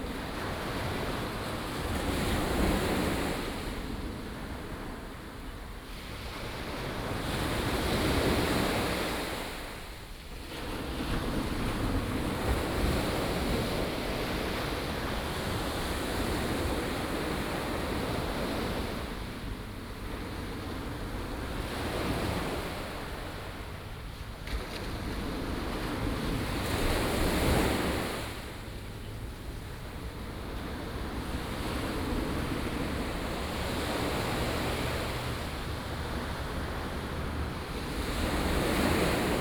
淺水灣, 三芝區後厝里, New Taipei City - Sound of the waves
Waterfront Park, At the beach, Sound of the waves, Aircraft flying through
Sanzhi District, New Taipei City, Taiwan